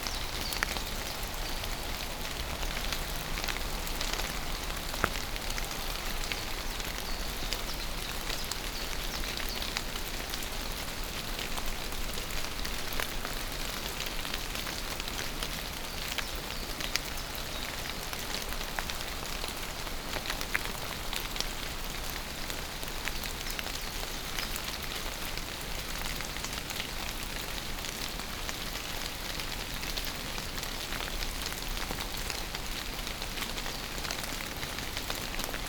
Steinbachtal, rain, WLD
Steinbachtal, standing under a bush, rain, WLD
Germany, 18 July 2011, 11:54